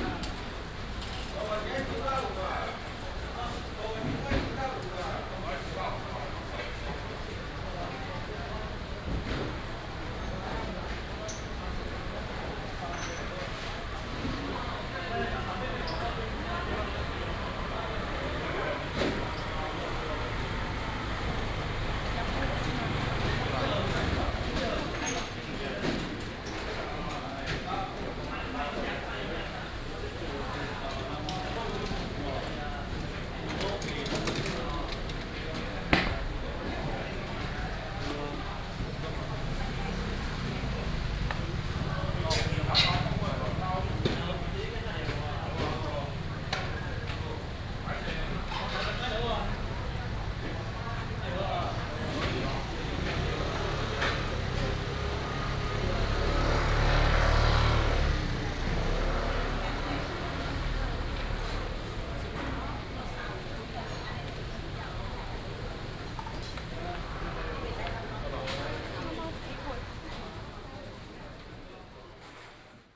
自強市場, Dadu Dist., Taichung City - traditional market
in the traditional market, traffic sound, Being sorted out, Cleaning up the market, Binaural recordings, Sony PCM D100+ Soundman OKM II